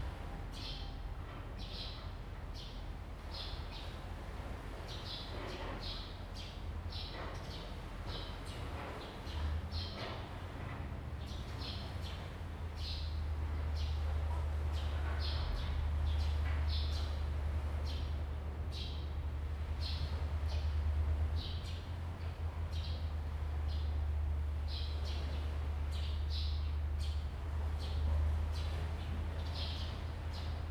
尖山村, Huxi Township - In the gazebo
In the gazebo, Construction, Birds singing
Zoom H2n MS +XY